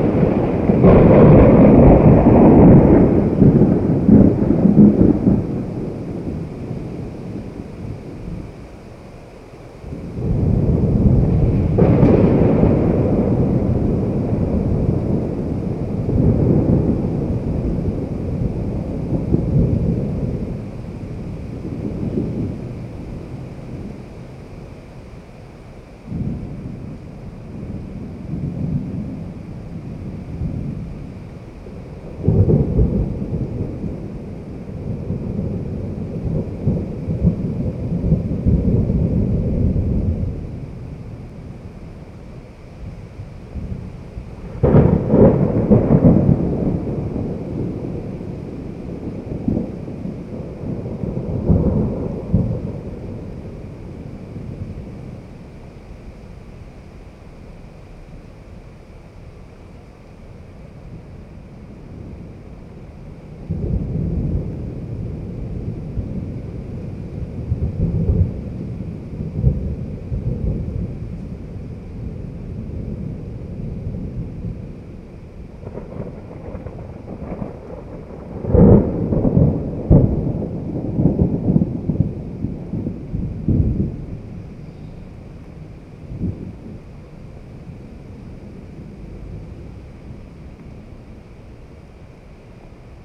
Thunder and rain in Sao Paulo.
Recorded from the window of the flat, close to Parque Aclimaçao.
São Paulo - SP, Brazil, March 2018